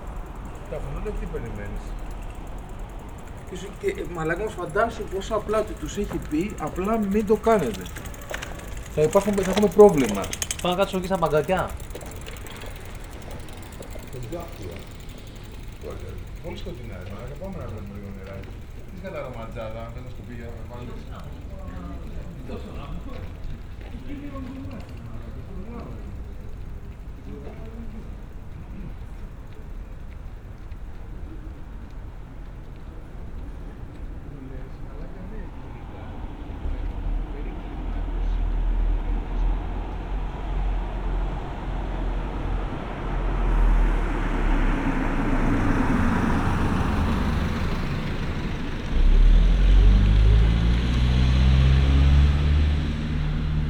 Berlin: Vermessungspunkt Friedelstraße / Maybachufer - Klangvermessung Kreuzkölln ::: 29.06.2012 ::: 02:07